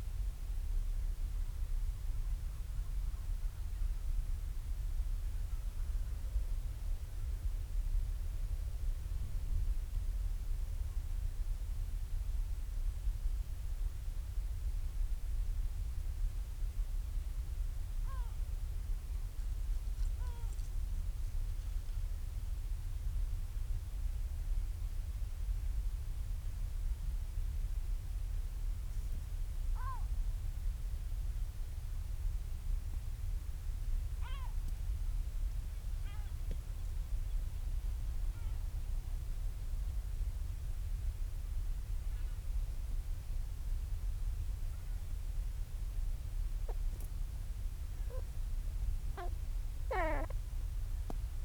Marloes and St. Brides, UK - european storm petrel ...
Skokholm Island Bird Observatory ... storm petrel quiet calls and purrings ... lots of space between the calls ... lavalier mics clipped to sandwich on top of bag ... calm sunny evening ...